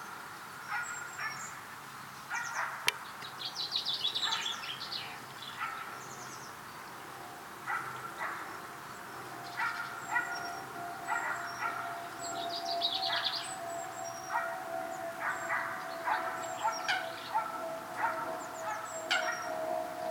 Hackney Marsh, London, Greater London, UK - Sunrise recording of wildlife
Stereo recording at sunrise